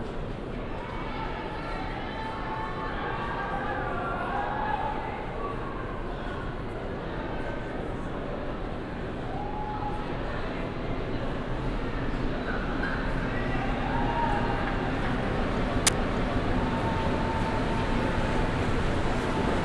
equipment used: Olympus LS-10 & OKM Binaurals
As the late night turns to early morning, the tuba plays on...
1 March 2009, ~04:00